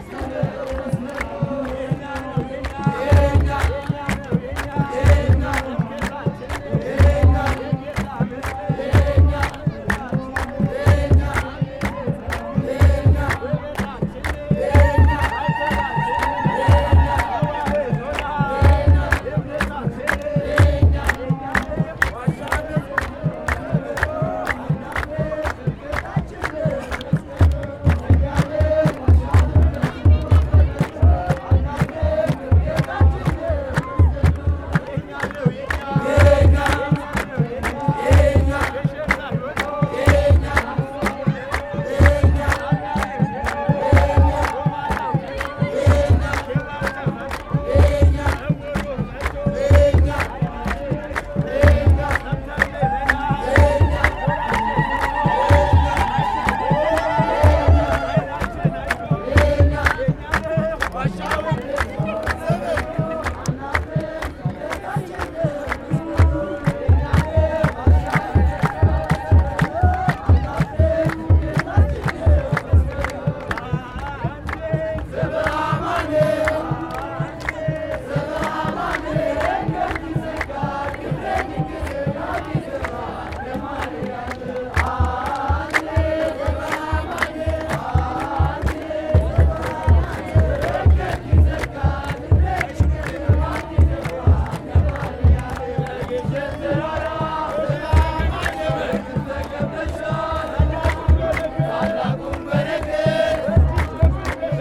D'habitude, c'est un simple terrain de football sans herbe mais ce lundi matin beaucoup de monde aux vêtements colorés s'est réuni. Les orthodoxes célèbrent Timkat (Epiphanie) depuis la nuit dernière par des prières et des chants. Ce lundi matin, c'est la fin de la cérémonie, des petits groupes se réunissent et chantent. Le premier, de jeunes hommes et femmes jouent successivement du tambour entourés par d'autres femmes et hommes qui chantent a cappella et frappent dans leurs mains. A la fin du son, on entend un autre groupe. Ce sont majoritairement des femmes réunies autour d'un homme qui chante au micro.
Au même moment, à quelques rues plus au Nord, plus de 10.000 personnes (sans doute) sont réunis sur un terrain de foot bien plus grand pour célébrer également Timkat.
Addis Ababa, Ethiopia, January 2015